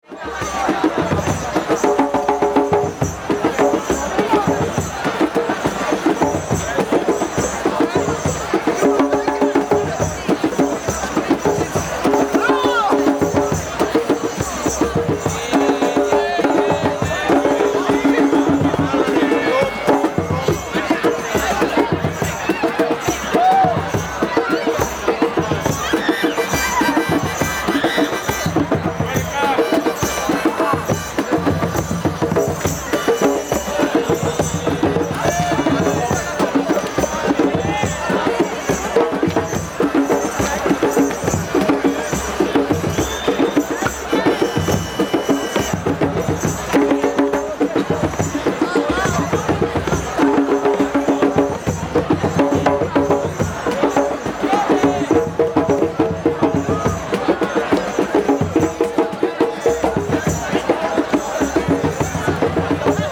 neoscenes: Vappuu drums
Vappu, the Finnish springtime coming-out party, is a sea of drunken chaos in the center of Helsinki with random musical ships drifting, full of displaced foreigners keeping the locals anchored in one reality or another.
Finland